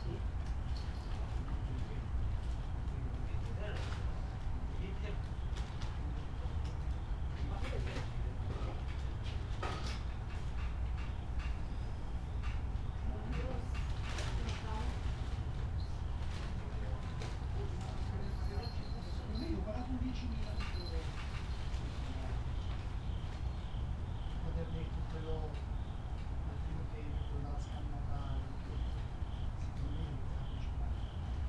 room window, Gojo Guest House Annex, Kyoto - quiet evening
wooden walls atmosphere, italian neighbors, bicyclist outside, night crickets, passers by